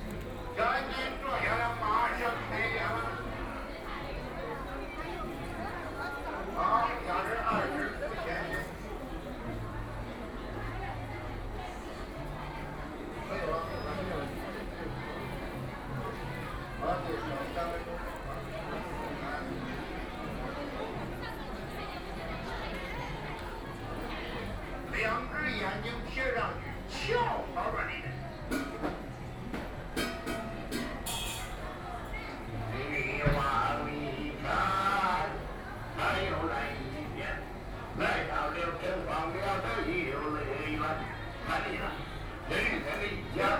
Yuyuan Garden, Shanghai - Yuyuan Garden
walking around the Yuyuan Garden, The famous tourist attractions, Very large number of tourists, Binaural recording, Zoom H6+ Soundman OKM II
Shanghai, China, 21 November 2013, 15:13